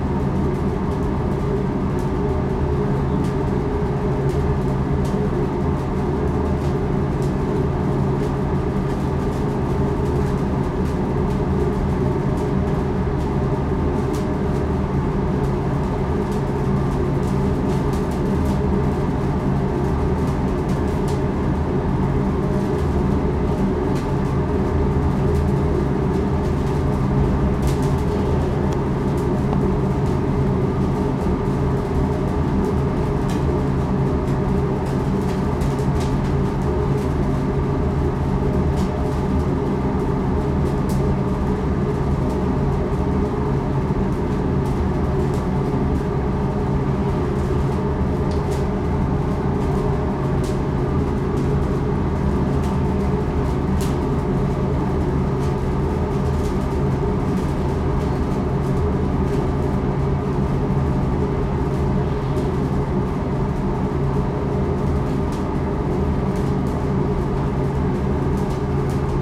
Avram Iancu Square, Cluj-Napoca, Rumänien - Cluj, orthodox cathedral, candel room
Inside the orthodox church in a narrrow cave like hallway, with candels in waterbassins. The sounds of the candles and a permanent ventilation hum.
international city scapes - topographic field recordings and social ambiences